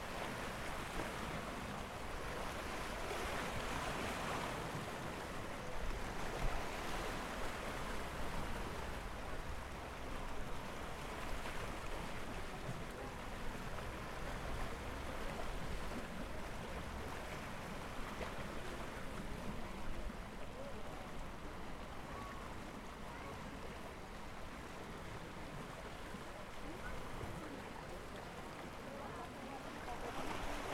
Quand la vague devient danseuse et qu'elle transporte dans ses mouvements les rêves d'un voyageur